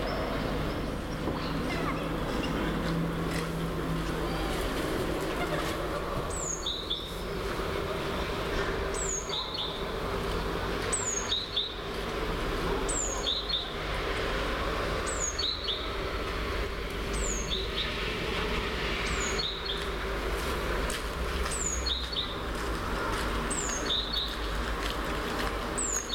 {
  "title": "вулиця Трудова, Костянтинівка, Донецька область, Украина - Весенняя улица",
  "date": "2019-03-09 12:12:00",
  "description": "Шумы улицы. Голос взрослых и детей. Пение птиц и шаги по асфальту",
  "latitude": "48.54",
  "longitude": "37.69",
  "altitude": "104",
  "timezone": "Europe/Kiev"
}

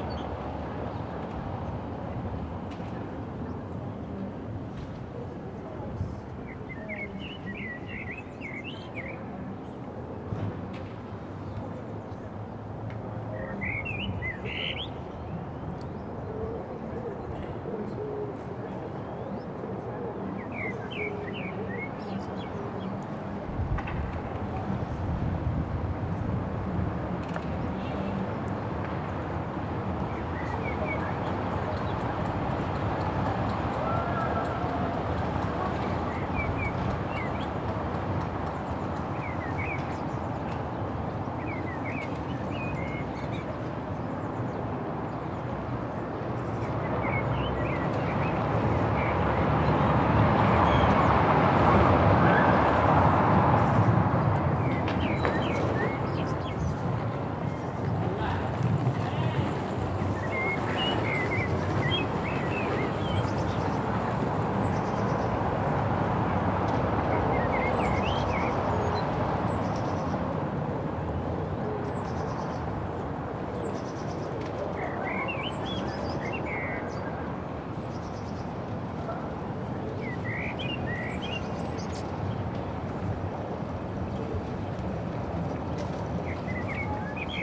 {
  "title": "Reuterstrasse: Balcony Recordings of Public Actions - Noise Protest Day 08",
  "date": "2020-03-28 17:57:00",
  "description": "Instead of the large protest around renting policies/evictions etc. that was planned for today, the protest moved online plus it went acoustic through a call to make noise on the balconies and at the windows, as people are staying home.\nWhile recording this from my balcony again, I had the window of the other room open where a live streamed concert of Bernadette LaHengst was playing. Her singing and the birds and the church bells at 6 pm were initially louder than the little banging that starts, but towards the end, more people join with banging and rattles.\nSony PCM D100",
  "latitude": "52.49",
  "longitude": "13.43",
  "altitude": "43",
  "timezone": "Europe/Berlin"
}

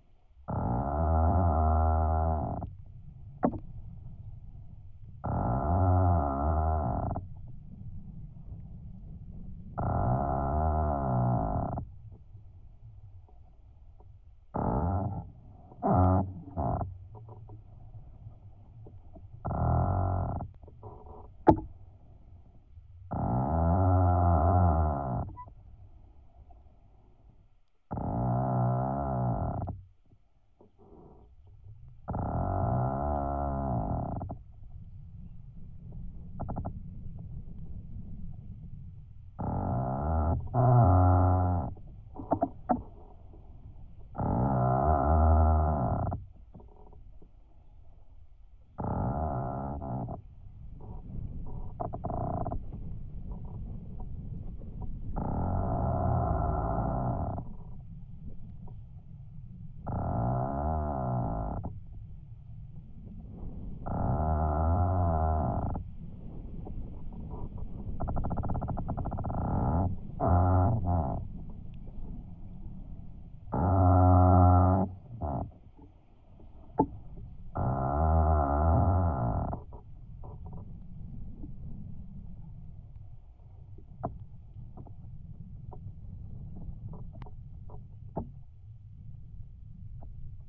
{
  "title": "Utena, Lithuania, a tree",
  "date": "2018-04-18 12:20:00",
  "description": "contact microphones on a tree in a city park",
  "latitude": "55.51",
  "longitude": "25.59",
  "altitude": "106",
  "timezone": "Europe/Vilnius"
}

